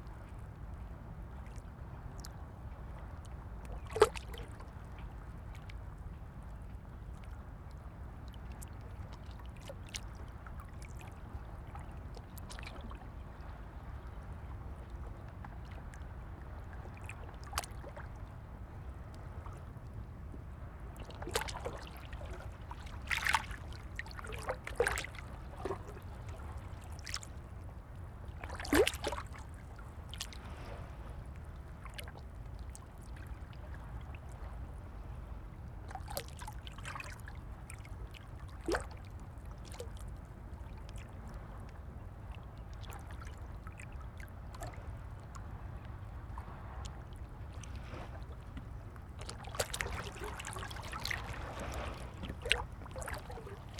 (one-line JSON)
{"title": "Jumeira 3 - Dubai - United Arab Emirates - DXB Jumeira Beach Light Splashes On Rocks", "date": "2011-10-23 07:12:00", "description": "I had to have my H4n right on the edge of the rocks to get a good signal which was pretty scary but I really happy with this recording.", "latitude": "25.19", "longitude": "55.23", "altitude": "13", "timezone": "Asia/Dubai"}